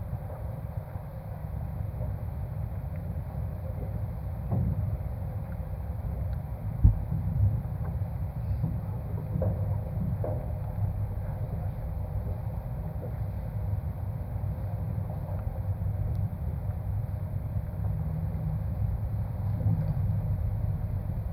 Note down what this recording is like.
abandoned wooden warehouse. windy day. placed my contact micros on some wooden beam holding the roof.